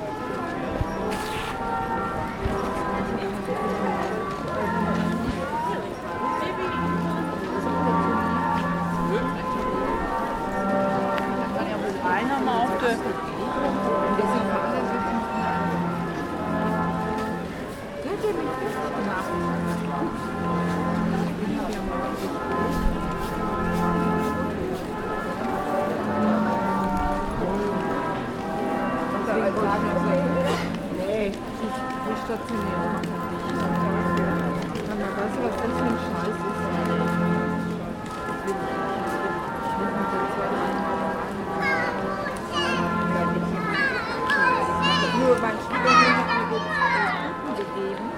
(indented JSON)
{
  "title": "Essen, Deutschland - Weihnachtsmusik ohne Glockenspiel / Christmas music without carillon",
  "date": "2014-11-26 15:37:00",
  "description": "Leider wurde hier nur Weihnachtsmusik gespielt. Das Glockenspiel war wohl eben beendet. / Unfortunately, only Christmas music was played. The carillon was probably just finished.",
  "latitude": "51.45",
  "longitude": "7.01",
  "altitude": "91",
  "timezone": "Europe/Berlin"
}